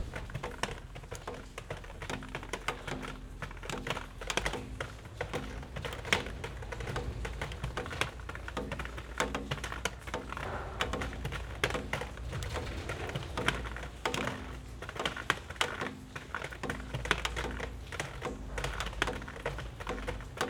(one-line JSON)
{"title": "Punto Franco Nord, Trieste, Italy - rain rops percussion", "date": "2013-09-11 14:40:00", "description": "Punto Franco Nord, derelict workshop building, percussive rain drops falling on a bunch of metal pieces and plastic\n(SD702, AT BP4025)", "latitude": "45.66", "longitude": "13.77", "altitude": "2", "timezone": "Europe/Rome"}